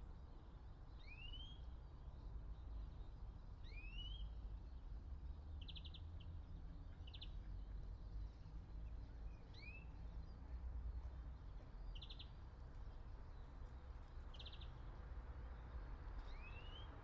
all the mornings of the ... - mar 23 2013 sat